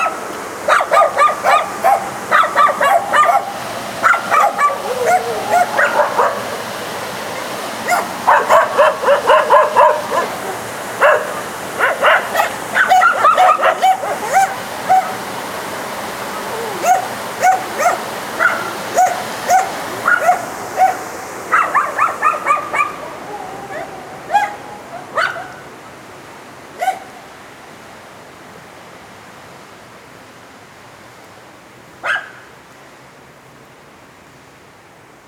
Pavia, Italy - the Mill
Old Mill, small barking dogs at the house in front of the channel
20 October 2012, 20:00